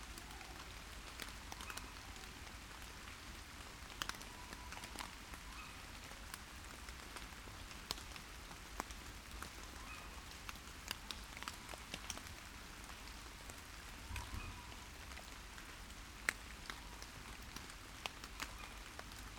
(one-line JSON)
{"title": "Warburg Nature Reserve, Bix Oxon - Tawney Owls in the gentle Rain", "date": "2021-01-31 05:10:00", "description": "I've been able to spend a wonderful lockdown daily exercise walk at this nature reserve close to my home over the last year (lucky me). Frequent visits have been accompanied by aeroplanes, other visitors, cars arriving and leaving and other Androphony. Last night it was gently raining and the Owls performed in a way that sounded more like an orchestra, got to say, being in that place for a couple of hours each day in silence has helped me big time mentally with the C19 effect of lockdown. Pluggies into a Tascam with handmade hard foam add-ons.", "latitude": "51.58", "longitude": "-0.96", "altitude": "114", "timezone": "Europe/London"}